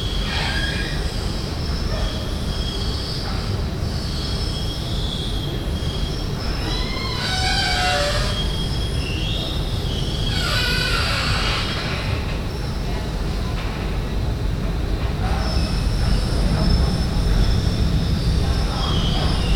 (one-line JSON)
{"title": "Zuidwal, Den Haag, Nederland - Creaking Escalator", "date": "2015-07-14 20:06:00", "description": "It's a creaking escalator... as you can hear. Binaural recording made in the Parking Grote Markt.", "latitude": "52.08", "longitude": "4.31", "altitude": "11", "timezone": "Europe/Amsterdam"}